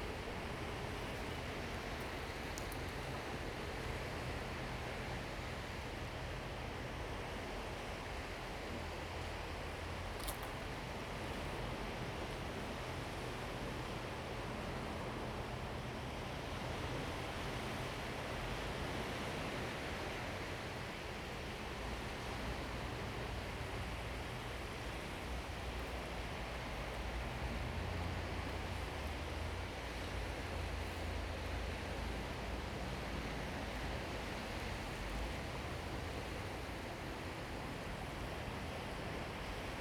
同安渡頭, Kinmen County - Small pier
Small pier, Chicken sounds, Sound of the waves
Zoom H2n MS+XY